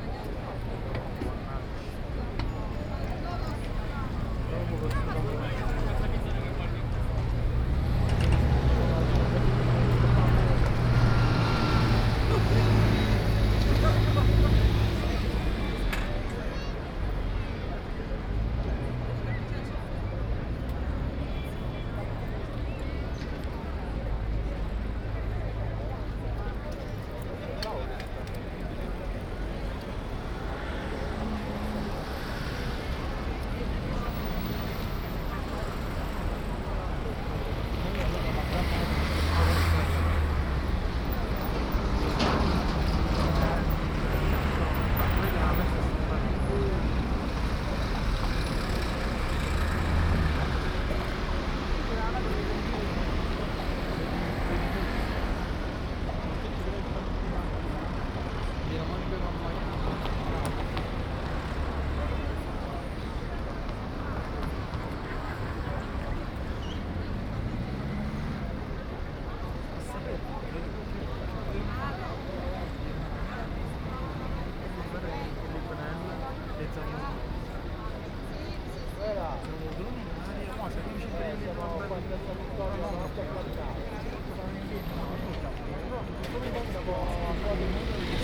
Ascolto il tuo cuore, città. I listen to your heart, city, Chapter LXXXVII - Monday at Piazza Vittorio with Frecce Tricolori passage in the time of COVID19 soundscape
"Monday at Piazza Vittorio with Frecce Tricolori passage in the time of COVID19" soundscape
Chapter LXXXVII of Ascolto il tuo cuore, città. I listen to your heart, city
Monday, May 25th 2020. Piazza Vittorio Veneto, Turin, with Frecce Tricolori aerobatic aerial patrol seventy-six days after (but day twenty-two of Phase II and day nine of Phase IIB and day three of Phase IIC) of emergency disposition due to the epidemic of COVID19.
Start at 2:58 p.m. end at 3:28 p.m. duration of recording 30’’00”
Coordinates: lat. 45.06405, lon. 7.69656
Piemonte, Italia